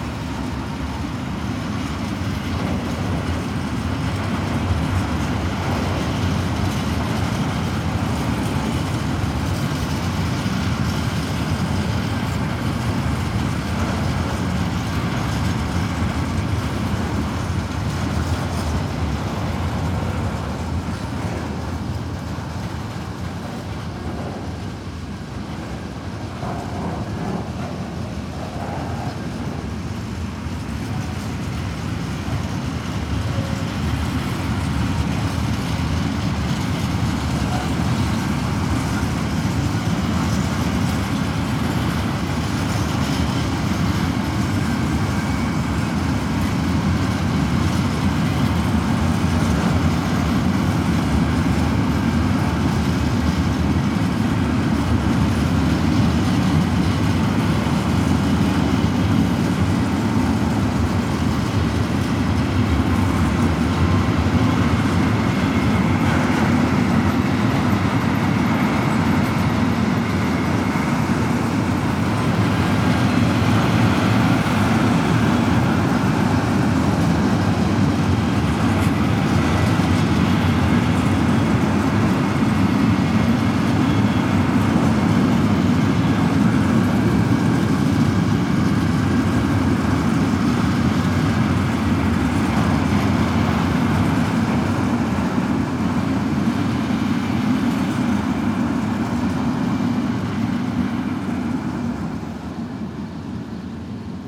Elbweg, Rathen, Deutschland - night ambience with cricket and train
Kurort Rathen, river Elbe, night ambience at the railroad crossing. Something's squeaking, a cricket tunes in, a very long freight train is passing by at low speed and can be heard very long, echoing in the Elbe valley. After 5 minutes, the next train is arriving already.
(Sony PCM D50)